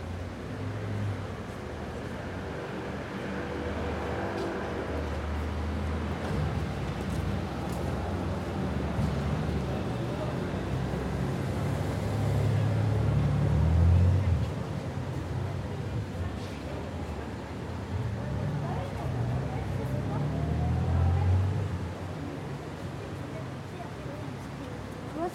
Pl. des Vosges, Paris, France - AMB PARIS EVENING PLACE DES VOSGES MS SCHOEPS MATRICED
This is a recording of the famous 'Place des Vosges' located in the 3th district in Paris. I used Schoeps MS microphones (CMC5 - MK4 - MK8) and a Sound Devices Mixpre6.